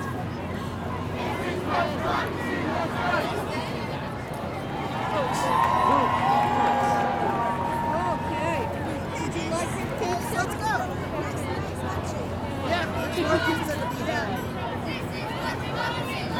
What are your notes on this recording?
Sounds from the protest "March for our Lives" in New York City. Zoom H6